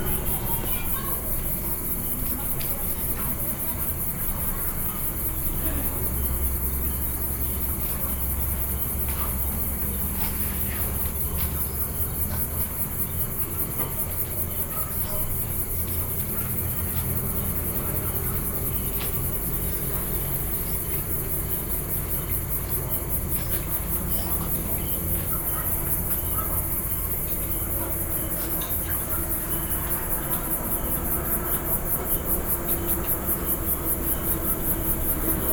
Vietnam, Ha Tinh 02/2005 rec. by Cedric Peyronnet
Hà Tĩnh, Vietnam